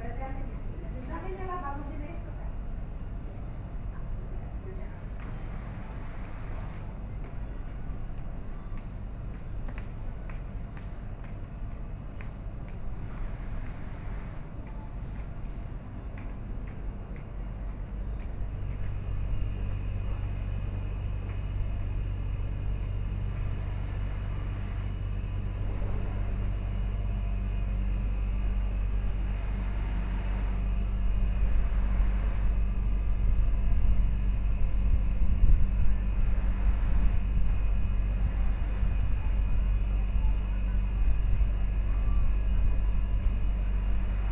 Coimbra, Rua do Corpo de Deus
a seamstress working machine listening to the radio